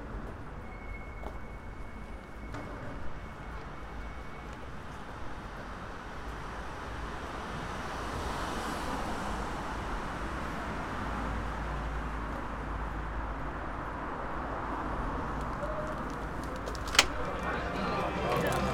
Rijeka, Croatia - Book Caffe Living Room